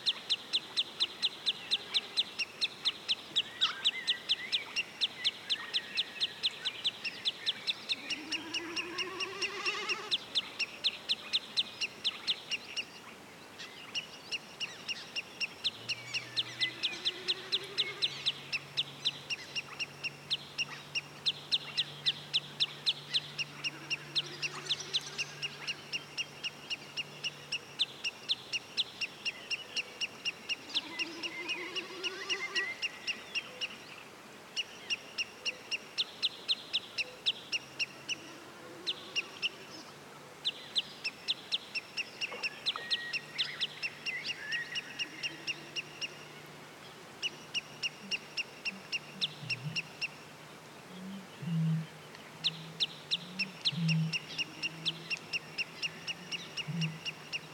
nature soundscape at Ahijärv
morning bird activity in the Emajogi bog. the strange electronic sound overhead is a bird known as 'common snipe'
19 June 2010, ~23:00